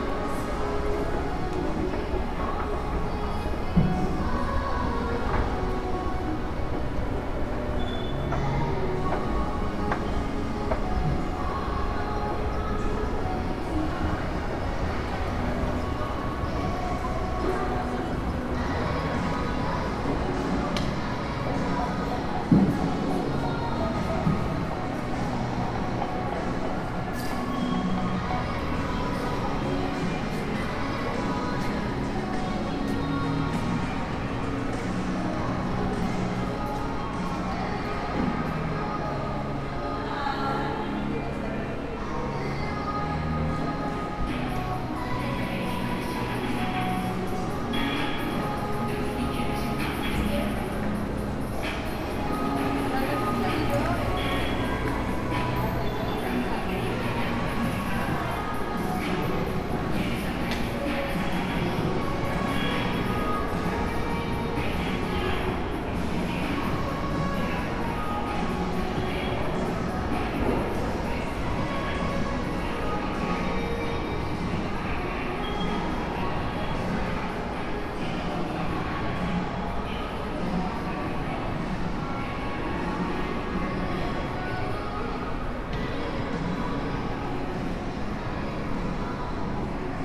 Blvd. Aeropuerto, Cerrito de Jerez Nte., León, Gto., Mexico - Centro comercial Altacia.
Altacia mall.
Walking through the corridors of the mall.
I made this recording on july 24th, 2022, at 12:00 p.m.
I used a Tascam DR-05X with its built-in microphones and a Tascam WS-11 windshield.
Original Recording:
Type: Stereo
Caminando por los pasillos del centro comercial.
Esta grabación la hice el 24 de julio 2022 a las 12:00 horas.
Guanajuato, México, 24 July